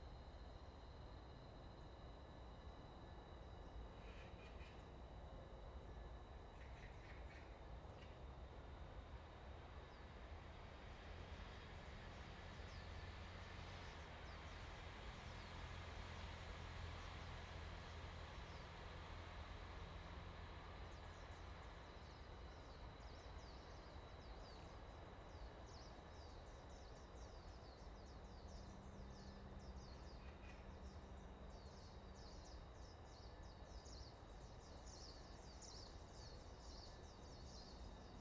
Kinmen National Park, Taiwan - In the woods
In the woods, Birds singing, Sound of insects, Wind
Zoom H2n MS +XY
金門縣 (Kinmen), 福建省, Mainland - Taiwan Border, 4 November 2014